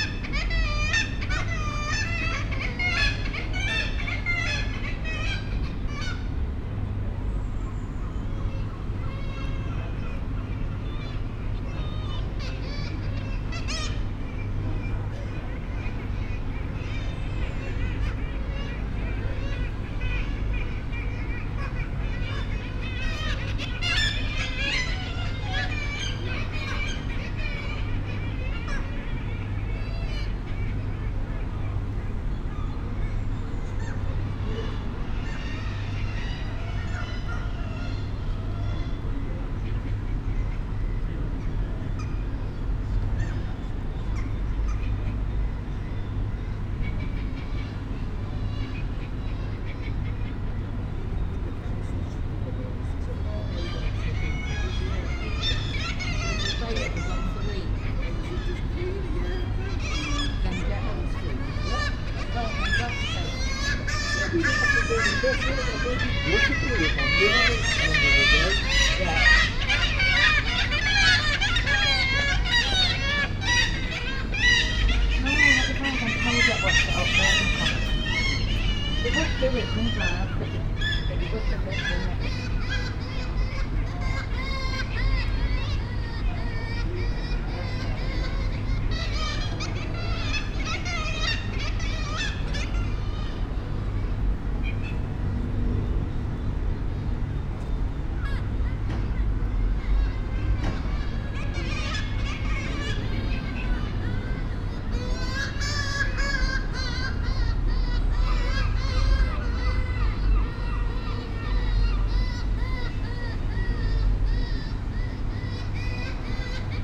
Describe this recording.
kittiwakes at the grand hotel ... kittiwake colony on the ledges and window sills at the back of the hotel ... SASS to Zoom H5 ... bird calls from herring gull ... jackdaw ... blue tit ... goldfinch ... background noise ... air conditioning ... traffic ... the scarborough cliff tramway ... voices ... a dog arrived at one point ... 20:12 two birds continue their squabble from a ledge and spiral down through the air ...